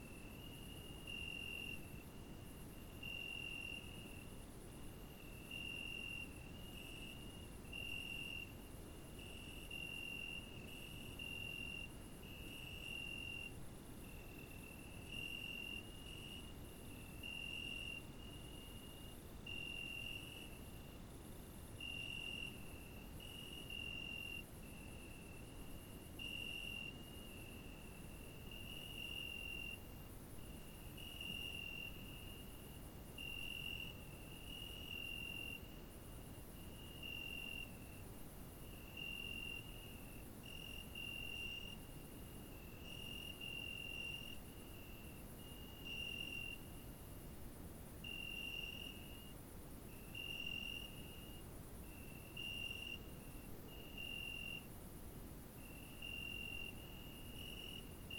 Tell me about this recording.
grillons vignes grenouilles tente nuit rivière